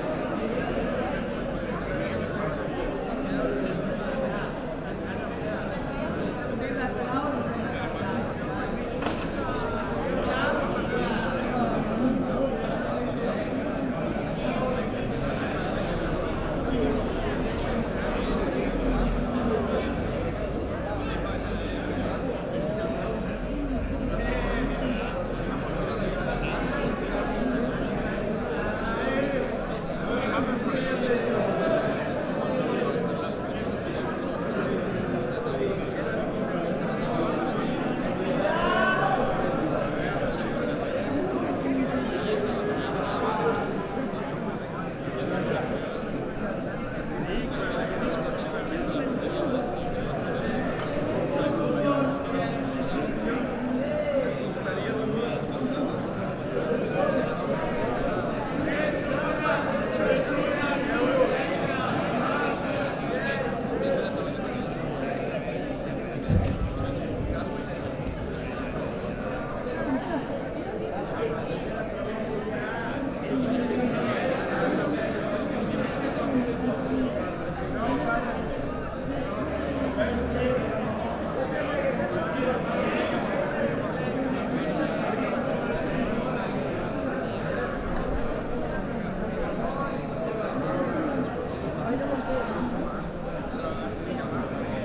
People having nice time in a square in the district of Gracia, Barcelona, during night.
Barcelona, Spain, 7 January 2011